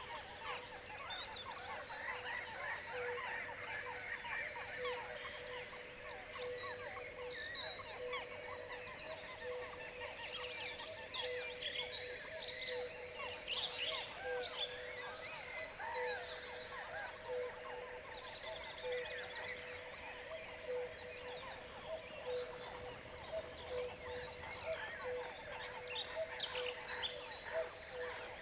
Dawn chorus at Meelva Lake, south Estonia
distant sounds of seagulls, cuckoos and other birds
Põlva County, Estonia, May 2011